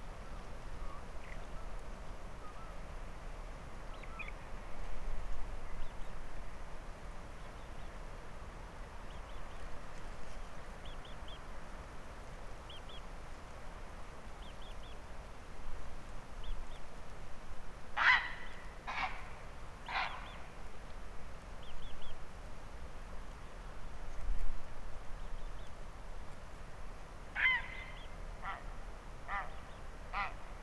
To the left of me were a line of pine trees that sang even with the slightest of breezes, and to the right was a hillside with a series of small waterfalls running down its slope. This recording includes Curlew, Grey Heron, Redshank, Greylag geese, Herring Gulls and the sound of seals rolling in the water of the still loch. Sony M10 and SAAS.
Scotland, United Kingdom, 8 November 2019, ~21:00